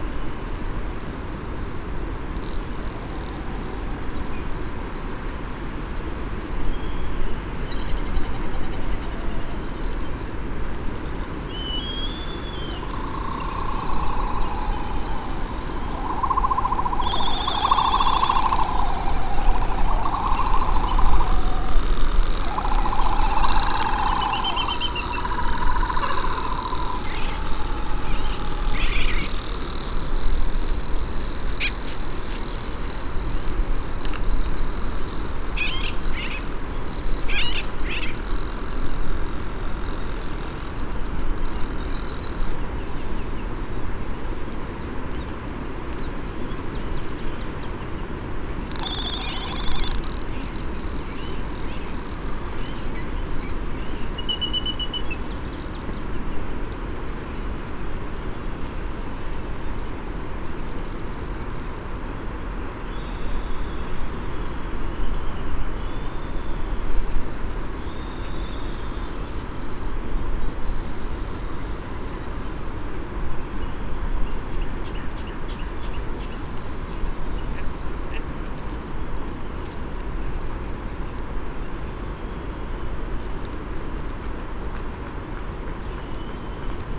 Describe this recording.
Great frigate bird calls and 'song' ... Sand Island ... Midway Atoll ... bird calls ... great frigate bird ... laysan albatross ... red -tailed tropic bird ... white tern ... canary ... black noddy ... parabolic ... much buffeting ... males make the ululating and ratchet like sounds ... upto 20 birds ... males and females ... parked in iron wood trees ...